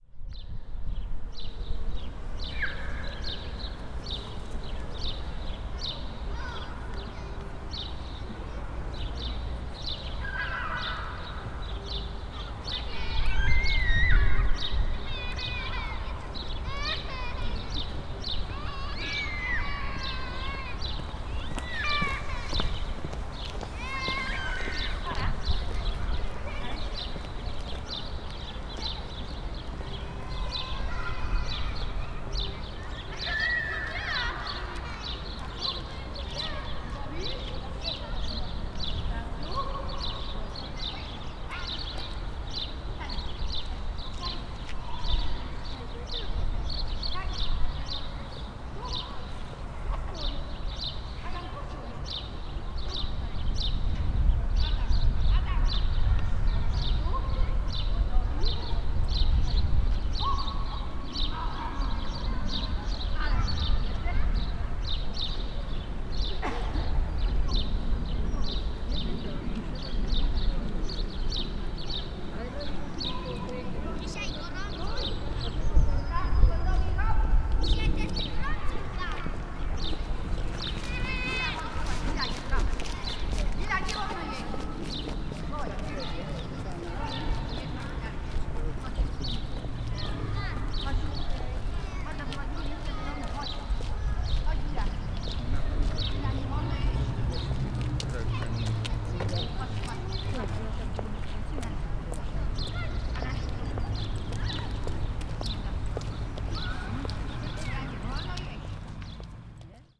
Poznan, Jana III Sobieskiego housing estate - urban void algorithm
tall apartment buildings create a concrete tank that nicely reverberates any sound made among its space. gives an impression of being in great void. kids playing in the sandbox. grandmas walking kids around play area.
Poznan, Poland, 18 April 2014, ~10:00